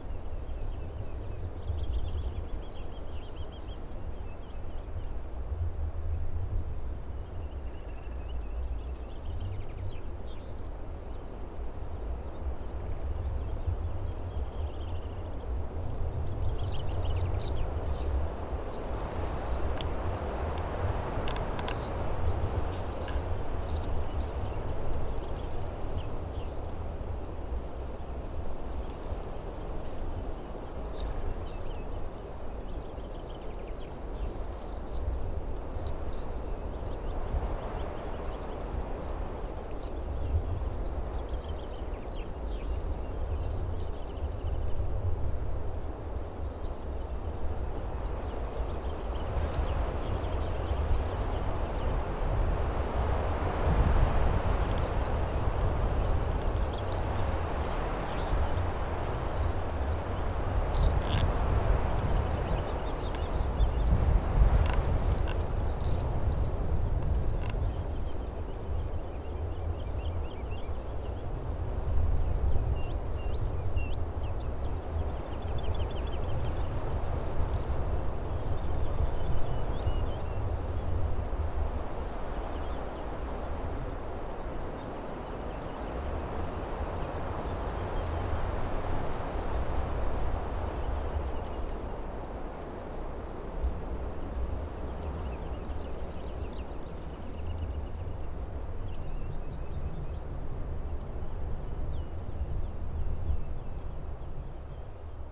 IJkbasis Loenermark - Low Pressure at IJkbasis
Simultaneous geophone and M-S recording on a windy day. The IJkbasis was built in the 1950's
as a triangulation point. The location was chosen because of the stability of the ground. WLD 2021